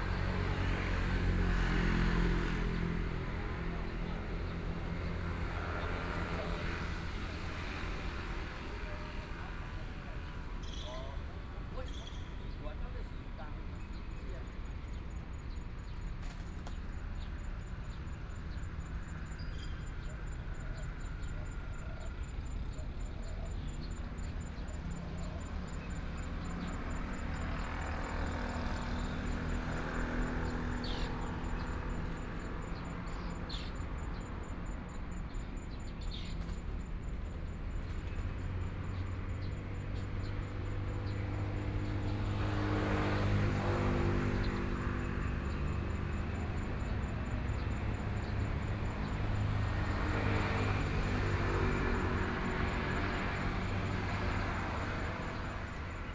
鹽埕區沙地里, Kaohsiung City - At the intersection
At the intersection, Traffic Sound, Birds singing
Kaohsiung City, Taiwan, 14 May 2014